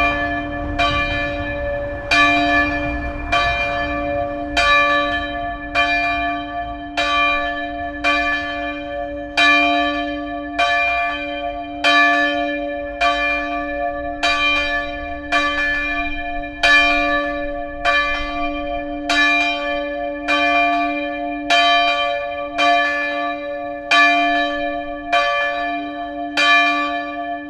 Bruxelles, Rue du melon, les cloches de la Paroisse Sainte Marie / Brussels, Saint Marys Church, the bells.